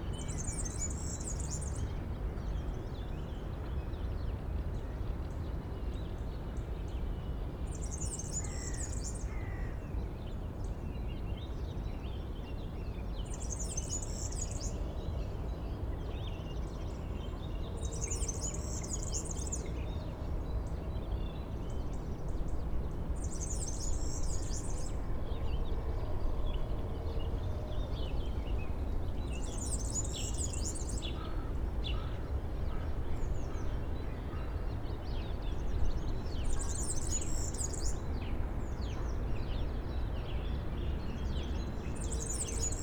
Friedhof Columbiadamm, Berlin - morning ambience, birds, traffic
morning ambience on graveyard Friedhof Columbiadamm. Rush hour traffic noise, aircrafts, construction sounds, many birds: woodpecker, hawk, tits and sparrows in a bush, crows, warbler, finches, a serin (Girlitz) quite close.
(SD702, S502 ORTF)
Berlin, Germany